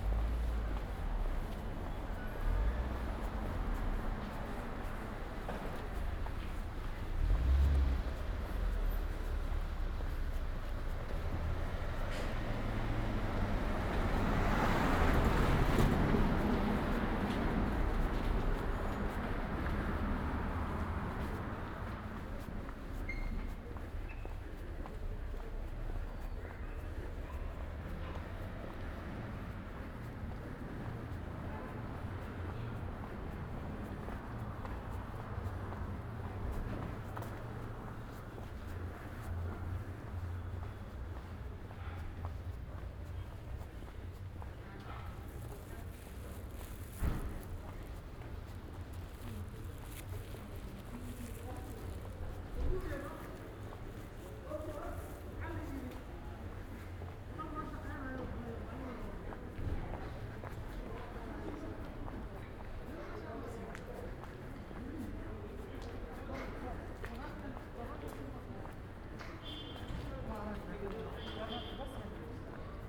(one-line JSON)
{"title": "Ascolto il tuo cuore, città. I listen to your heart, city. Several chapters **SCROLL DOWN FOR ALL RECORDINGS** - It’s five o’clock on Saturday with bells in the time of COVID19: Soundwalk", "date": "2021-02-13 16:55:00", "description": "\"It’s five o’clock on Saturday with bells in the time of COVID19\": Soundwalk\nChapter CXXXI of Ascolto il tuo cuore, città. I listen to your heart, city\nSaturday, February 13th, 2021. San Salvario district Turin, walking to Corso Vittorio Emanuele II, then Porta Nuova railway station and back.\nMore than three months of new restrictive disposition due to the epidemic of COVID19.\nStart at 4:55 p.m. end at 5:36 p.m. duration of recording 40’53”\nThe entire path is associated with a synchronized GPS track recorded in the (kmz, kml, gpx) files downloadable here:", "latitude": "45.06", "longitude": "7.68", "altitude": "249", "timezone": "Europe/Rome"}